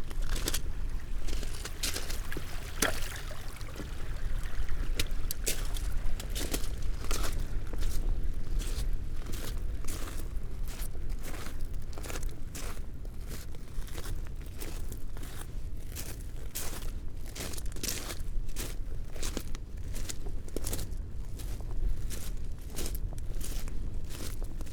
Budle Cottages, Bamburgh, UK - walking the parabolic ... on a beach ...
walking the parabolic ... on a beach ... parabolic ... flapping trousers ... small stream ... walking on ... bird call ... curlew ...